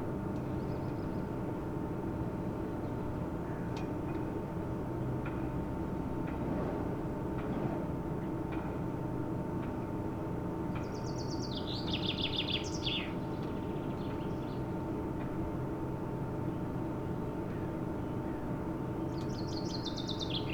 burg/wupper: schlossplatz - the city, the country & me: noise of chairlift motor and wind-whipped ropes of flagstaffs
fresh spring day, noise of chairlift motor, wind-whipped ropes of flagstaffs, singing birds, tourists
the city, the country & me: may 6, 2011